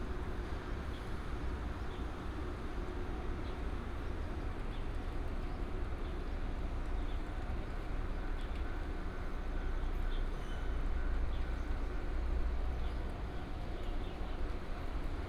Pinghe St., Dadu Dist. 台中市 - Walk along the tracks
Walk along the tracks, Factory sound, Traffic sound, train runs through
18 March, ~13:00